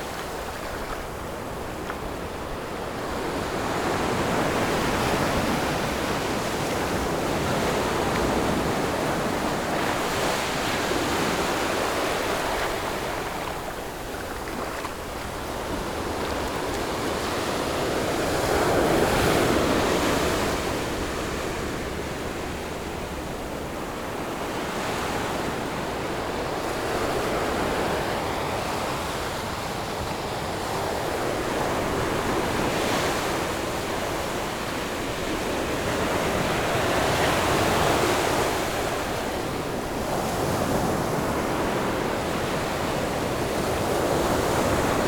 In the beach, Sound of the waves
Zoom H6 MS+ Rode NT4
Yilan County, Taiwan, 26 July 2014, ~16:00